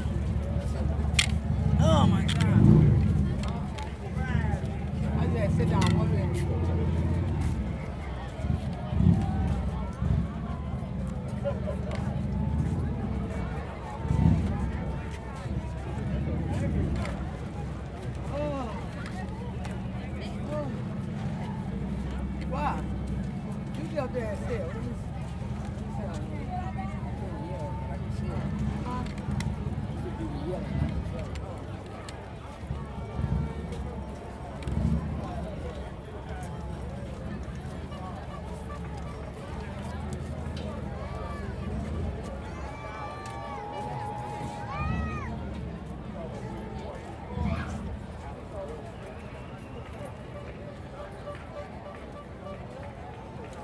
LA - martin luther king memorial parade at crenshaw / martin luther king jr, spectators and water sellers
CA, USA, 20 January 2014